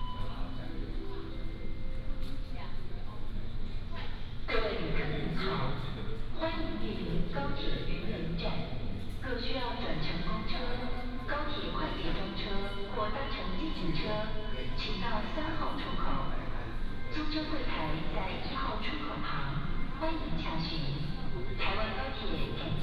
Station Message Broadcast, In the station hall
Yunlin County, Taiwan, 31 January 2017